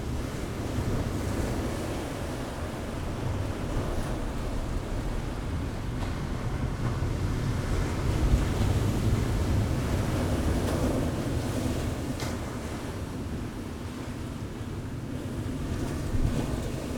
Whitby, UK - high tide ... two hours after ...
high tide ... two hours after ... lavaliers clipped to sandwich box ...